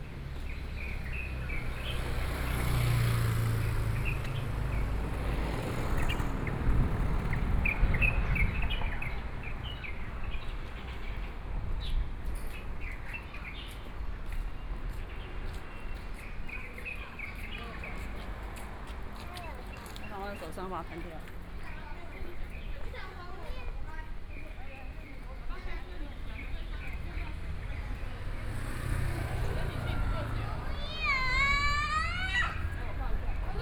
Xinxing Rd., Taipei City - walking on the Road
walking on the Road, Traffic Sound, Birdsong, Dogs barking
Taipei City, Taiwan, May 2014